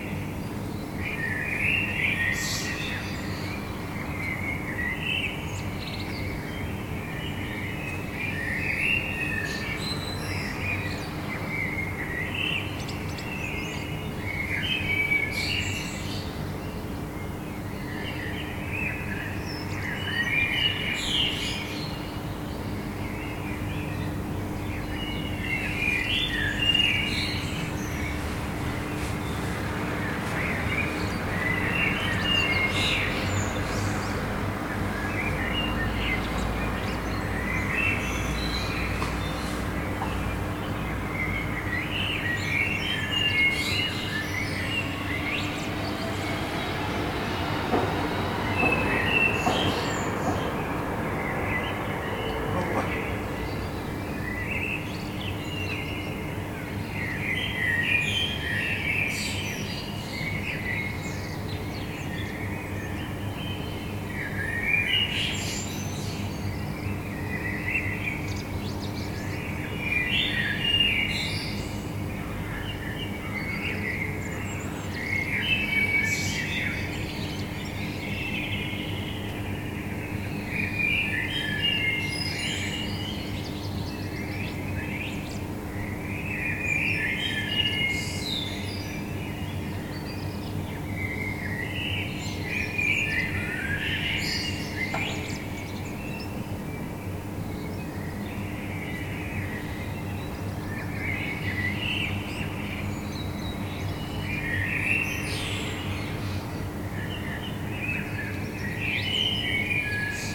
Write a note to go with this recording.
bird song, city noise, metro . Captation : ZOOMh4n